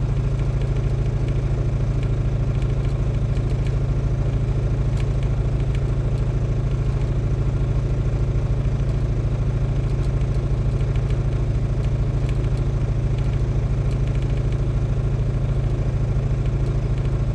berlin: liberdastraße - the city, the country & me: generator
the city, the country & me: august 20, 2010
Berlin, Germany, 20 August 2010